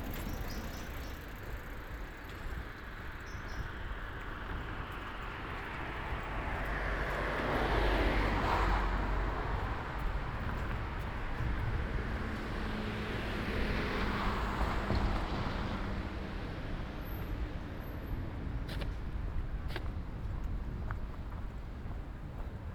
Ascolto il tuo cuore, città. I listen to your heart, city. Several chapters **SCROLL DOWN FOR ALL RECORDINGS** - Round Noon bells on Sunday in the time of COVID19 Soundwalk
"Round Noon bells on Sunday April 26 in the time of COVID19" Soundwalk
Chapter LVII of Ascolto il tuo cuore, città. I listen to your heart, city
Sunday April 26th 2020. San Salvario district Turin, walking to Corso Vittorio Emanuele II and back, forty seven days after emergency disposition due to the epidemic of COVID19.
Start at 11:55 a.m. end at 00:18 p.m. duration of recording 22'30''
The entire path is associated with a synchronized GPS track recorded in the (kmz, kml, gpx) files downloadable here:
Piemonte, Italia, 26 April 2020